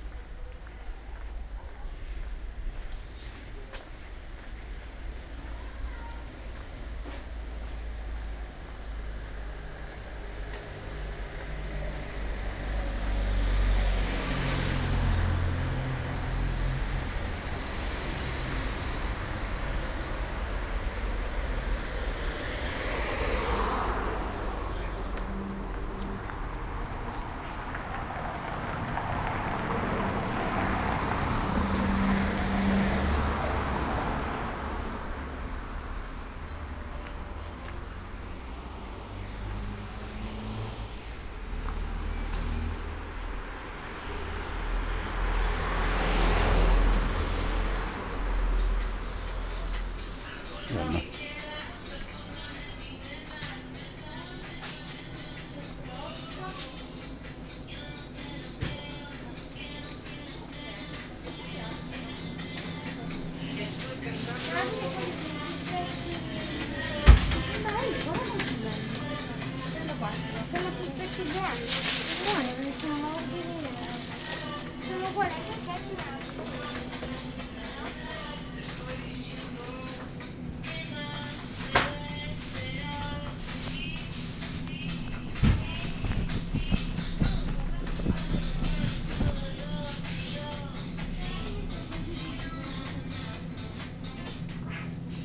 Ascolto il tuo cuore, città. I listen to your heart, city. Several chapters **SCROLL DOWN FOR ALL RECORDINGS** - Ferragosto noon bells in the time of COVID19 Soundwalk
Ferragosto noon bells in the time of COVID19" Soundwalk
Chapter CLXXXI of Ascolto il tuo cuore, città. I listen to your heart, city
Sunday, August 15th, 2021, San Salvario district Turin, walking to Corso Vittorio Emanuele II and back, crossing Piazza Madama Cristina market. More than one year and five months after emergency disposition due to the epidemic of COVID19.
Start at 11:46 a.m. end at 00:33 p.m. duration of recording 37’27”
The entire path is associated with a synchronized GPS track recorded in the (kmz, kml, gpx) files downloadable here: